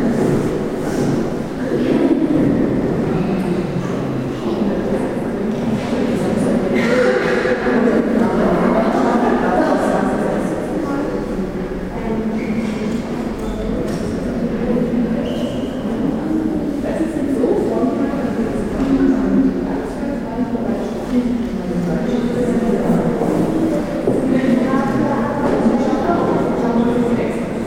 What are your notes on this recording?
kunst, atmo, austellungshalle, raum